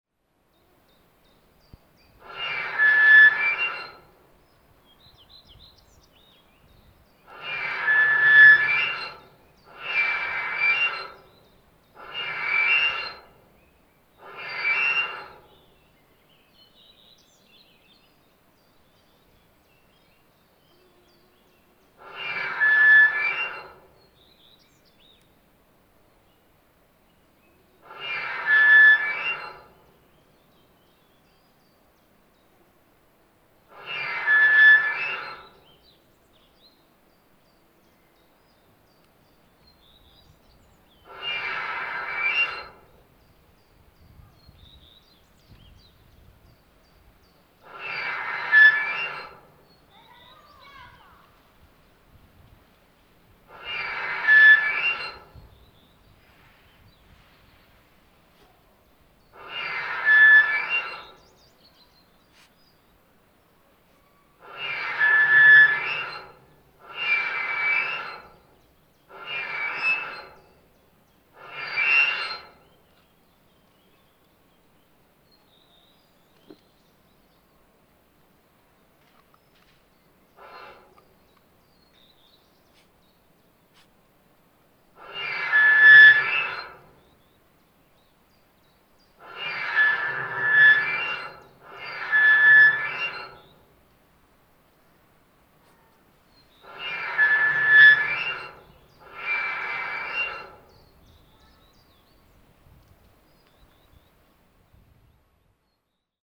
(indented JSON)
{
  "title": "Třeboň, Czech Republic - Local brewery in Trebon",
  "date": "2009-05-03 10:51:00",
  "description": "The sound of beer being cooked?",
  "latitude": "49.00",
  "longitude": "14.77",
  "altitude": "433",
  "timezone": "Europe/Prague"
}